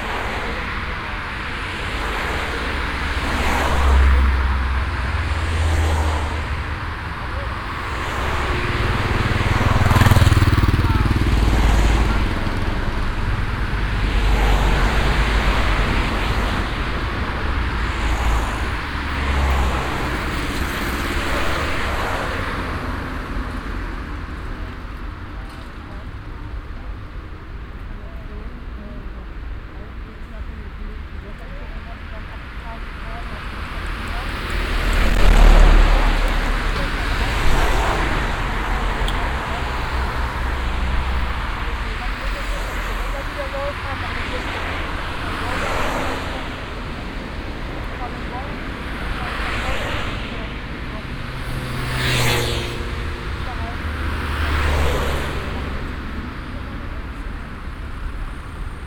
cologne, maybachstrasse, erftstrasse, verkehr an ampel
reger nachmittagsverkehr an stadtausfahrtsstrecke, übergang ampel
soundmap nrw:
projekt :resonanzen - social ambiences/ listen to the people - in & outdoor nearfield recordings
20 September 2008